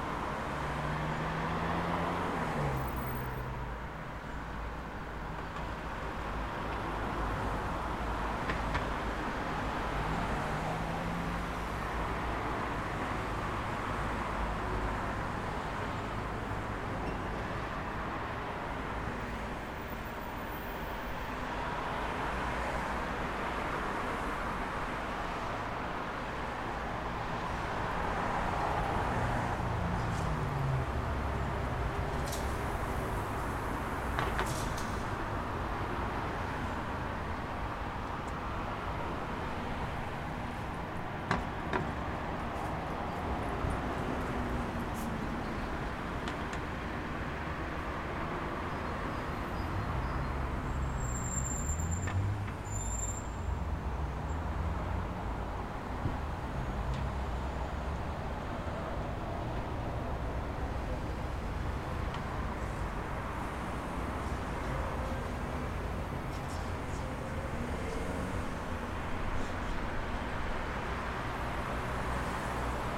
An older man was sitting alone in a parked car, apparently locked-in by his relatives. While waiting for them to return from wherever they've gone to, he occasionally set of a car alarm, probably by slight movements. Careful, the alarm sound is much louder than the surrounding atmosphere! Recorded with ZOOM H5.